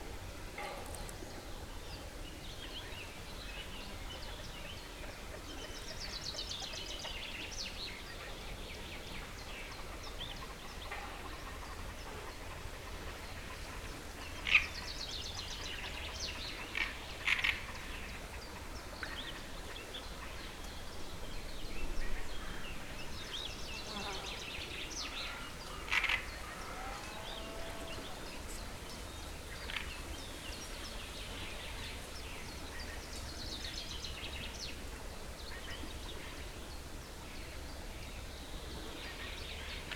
Pakalniai, Lithuania, at the lake